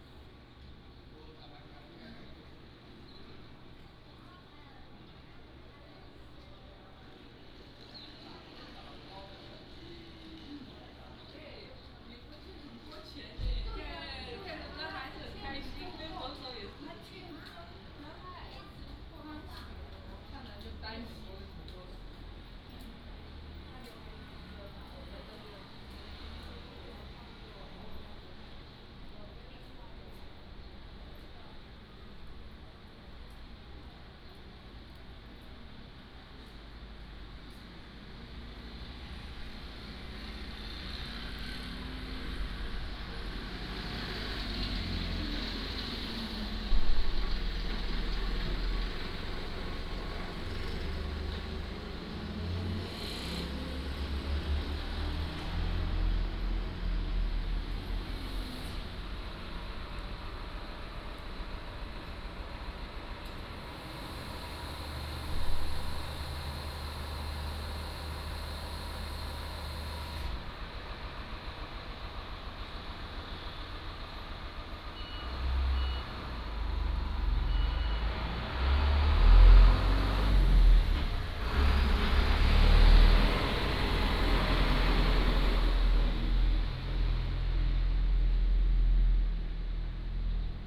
塘岐村, Beigan Township - In the bus station
In the bus station, A small village in the morning
福建省 (Fujian), Mainland - Taiwan Border, 14 October